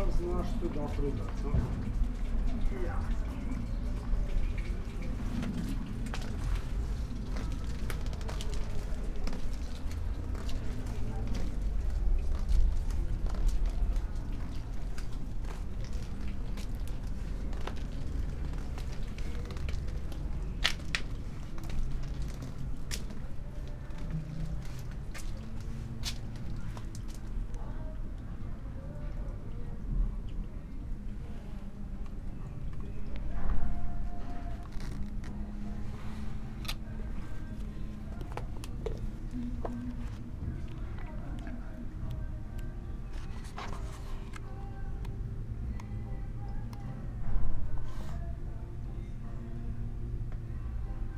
reading poem Pošast ali Metulj? (Mostru o pavea?) by Pier Paolo Pasolini
Ljubljana, Slovenia, 2 July 2015, 18:19